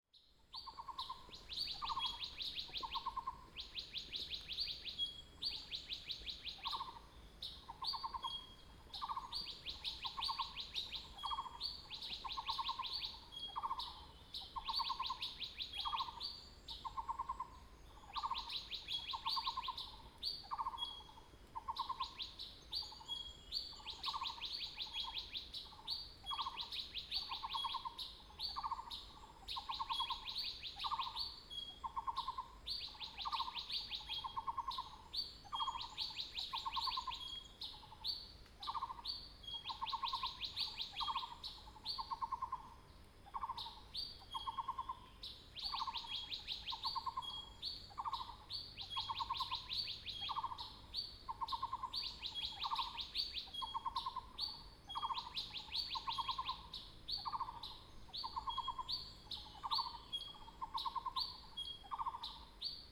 {
  "title": "水上, Puli Township, Nantou County - Birds singing",
  "date": "2016-04-26 05:22:00",
  "description": "Birds singing, Next to the woods",
  "latitude": "23.94",
  "longitude": "120.91",
  "altitude": "639",
  "timezone": "Asia/Taipei"
}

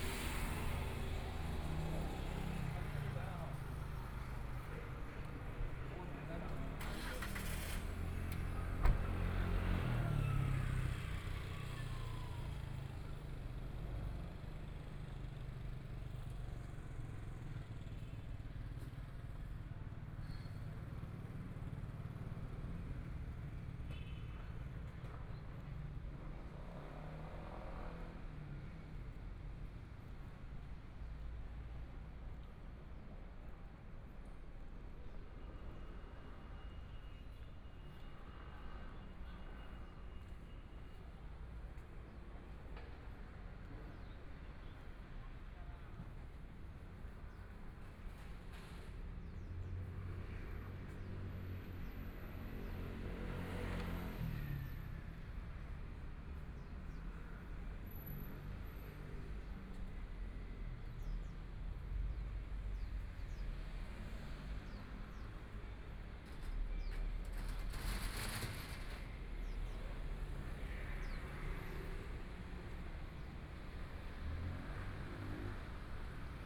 {
  "title": "台北市中山區中吉里 - walking in the Street",
  "date": "2014-01-20 16:19:00",
  "description": "Walking in the small streets, Traffic Sound, Binaural recordings, Zoom H4n+ Soundman OKM II",
  "latitude": "25.06",
  "longitude": "121.53",
  "timezone": "Asia/Taipei"
}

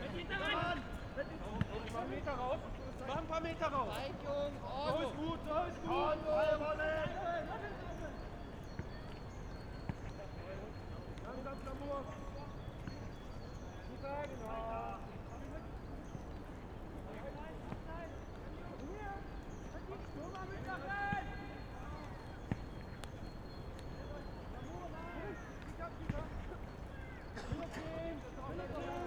{
  "title": "river Wuhle, Hellersdorf, Berlin - Sunday soccer at stadium Wuhletal",
  "date": "2016-02-21 14:25:00",
  "description": "sound of a soccer game, Sunday late winter afternoon\n(SD702, AT BP4025)",
  "latitude": "52.52",
  "longitude": "13.58",
  "altitude": "42",
  "timezone": "Europe/Berlin"
}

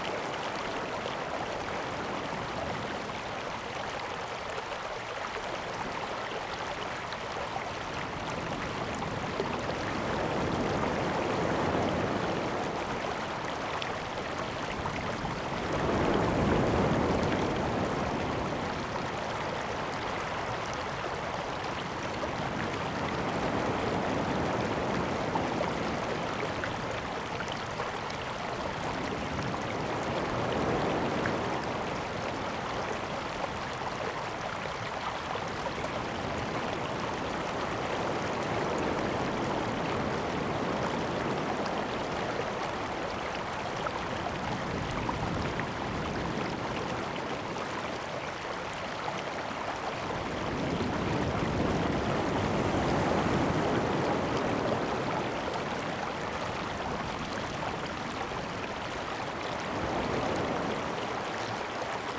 {
  "title": "建農里, Taitung City - Streams and waves",
  "date": "2014-09-04 16:01:00",
  "description": "Streams and waves, The weather is very hot\nZoom H2n MS +XY",
  "latitude": "22.71",
  "longitude": "121.10",
  "altitude": "4",
  "timezone": "Asia/Taipei"
}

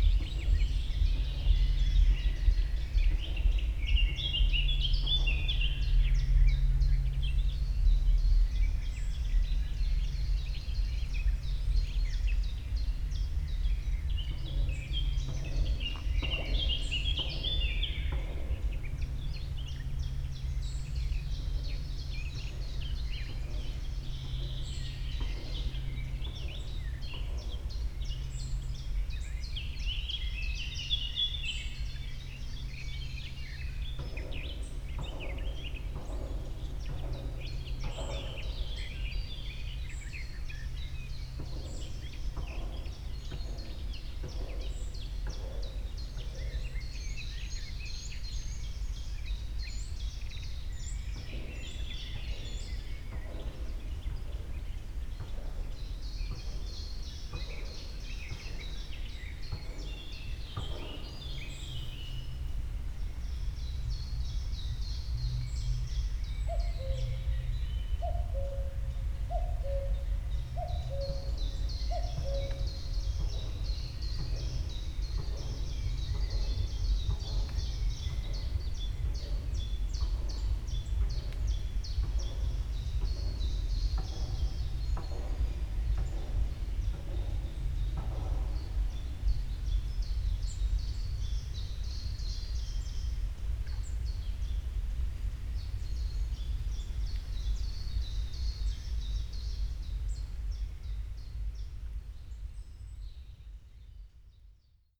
{
  "title": "Röblinsee, Fürstenberg/Havel, Deutschland - river havel, forest ambience",
  "date": "2016-07-02 18:40:00",
  "description": "Röblinsee, Fürstenberg, the river Havel connects the many lakes in this area. Wind, birds, work sounds and a distant sound system\n(Sony PCM D50, Primo EM172)",
  "latitude": "53.19",
  "longitude": "13.12",
  "altitude": "64",
  "timezone": "Europe/Berlin"
}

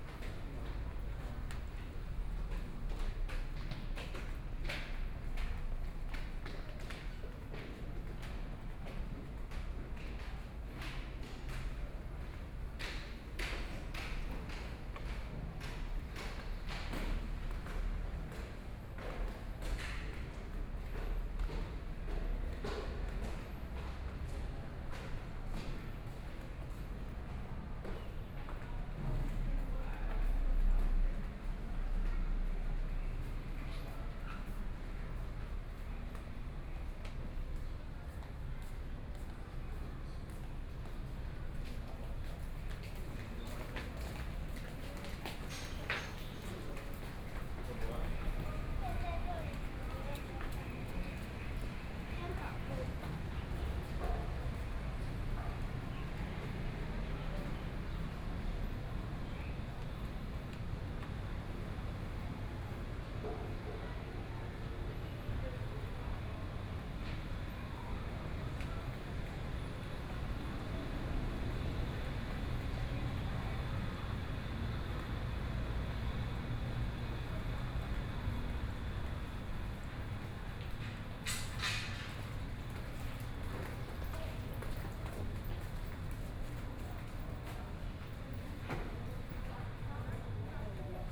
{
  "title": "Buddhist Temple, Luzhou, New Taipei City - walking in the Temple",
  "date": "2013-10-20 14:22:00",
  "description": "Buddhist Temple, Walking in the temple each floor, Binaural recordings, Sony PCM D50 + Soundman OKM II",
  "latitude": "25.08",
  "longitude": "121.47",
  "altitude": "8",
  "timezone": "Asia/Taipei"
}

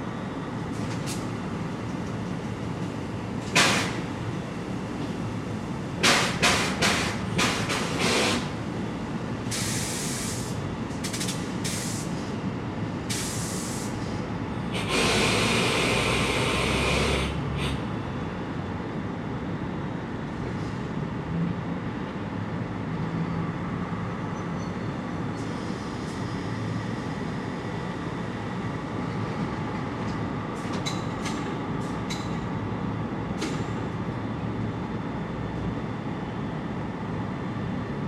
{
  "title": "Elephant & Catle, London, UK - Resting Trains",
  "date": "2016-10-02 02:05:00",
  "description": "Recorded with a pair of DPA 4060s and a Marantz PMD661 — facing the London Road Tube Depot from a third story window.",
  "latitude": "51.50",
  "longitude": "-0.10",
  "altitude": "5",
  "timezone": "Europe/London"
}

